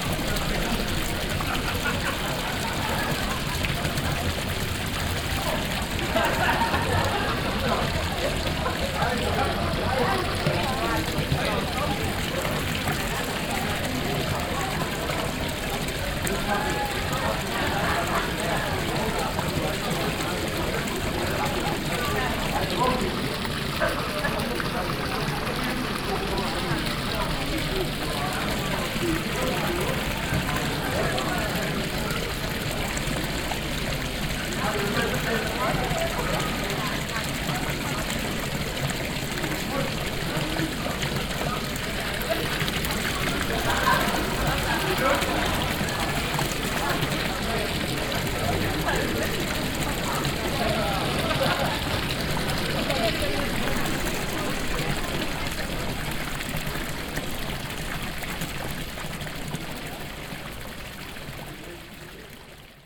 diekirch, market place, fountain
On the market place in the evening at a fountain that shows the figure of a famous donkey fairytale. In teh background a group of women celebrating a bachelor party.
international village scapes - topographic field recordings and social ambiences
8 August 2011, ~21:00